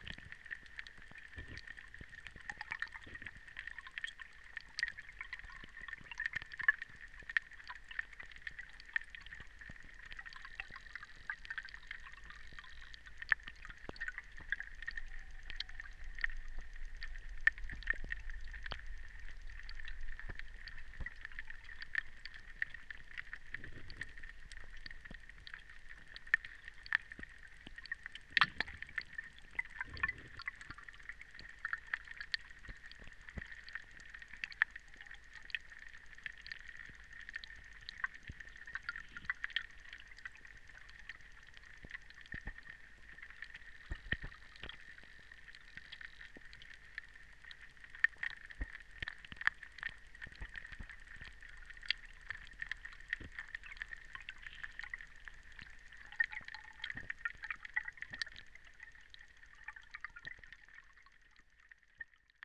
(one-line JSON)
{"title": "Utena, Lithuania, pond underwater", "date": "2017-07-01 16:36:00", "description": "some pond at the edge of the town and near the road - you can hear cars passing by", "latitude": "55.51", "longitude": "25.58", "altitude": "116", "timezone": "Europe/Vilnius"}